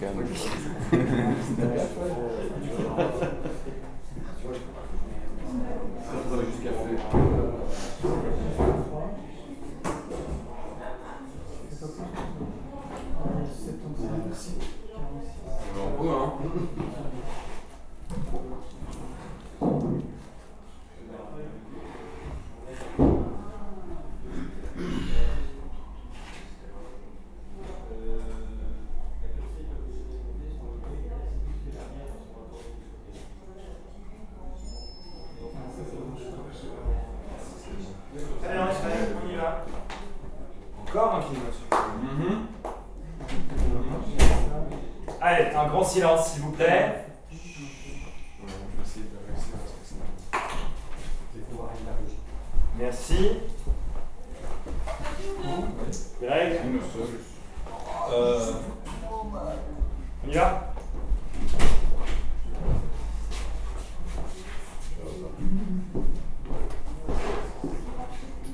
Court-St.-Étienne, Belgique - Filmmaking
Film production, in an old school transformed in a police station. The film is called "La Forêt" and it's a 6 times 52 mn (Nexus Production). The recording contains timeouts, and three shootings (3:12 mn, 10:49 mn, 14:37). It's a dumb sequence when a murderer is waiting to be interrogated. Thanks to the prod welcoming me on the filmmaking.